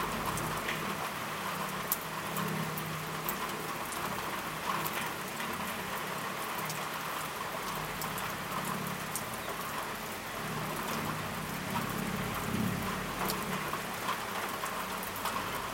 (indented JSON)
{
  "title": "rain on leaves, silence - rain on veranda",
  "description": "stafsäter recordings.\nrecorded july, 2008.",
  "latitude": "58.31",
  "longitude": "15.66",
  "altitude": "119",
  "timezone": "GMT+1"
}